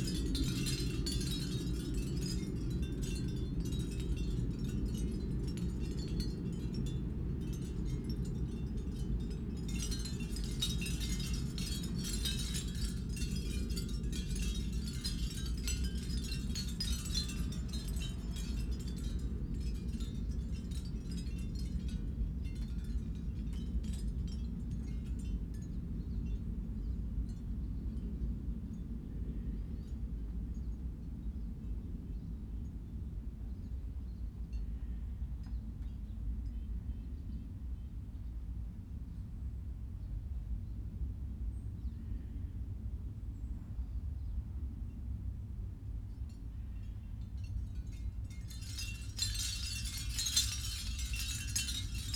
I love reading on the deck, to the sound of oystershell windchimes in the gentle morning breeze. Sometimes the wind kicks up high enough to engage the big 55" Corinthian Bells windchimes. Inside, Desi alerts to somebody walking by on the street and has to come out to sniff the air.
Washington, United States of America, 1 September